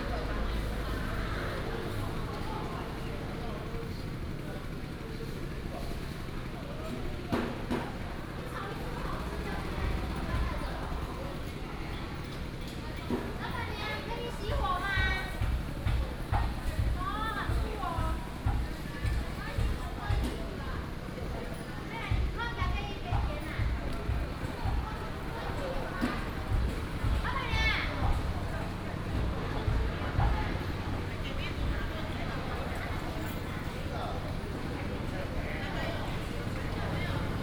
苗北公有零售市場, 苗栗市 - Outside the market
Outside the market, Street vendors, Traffic sound